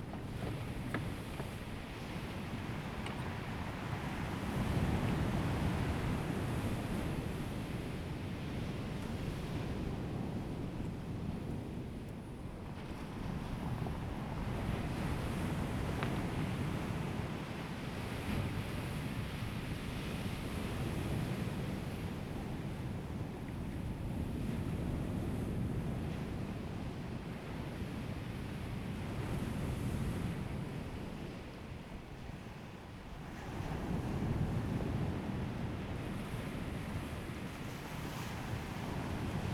南田村, Daren Township - Sound of the waves
Sound of the waves, The weather is very hot
Zoom H2n MS +XY